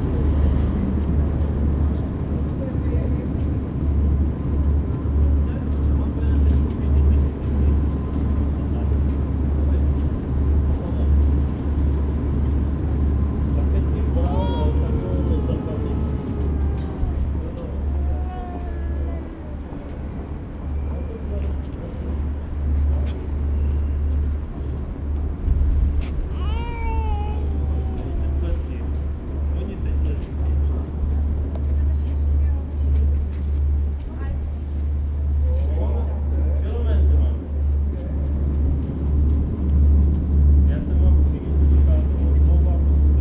Národní Praha, Česká republika - Hlava
Ambience of the little square behind the new bussine center Quadro with kinetic huge sculpture by David Černý.
Charvátova, Praha-Praha, Czech Republic, 19 November 2014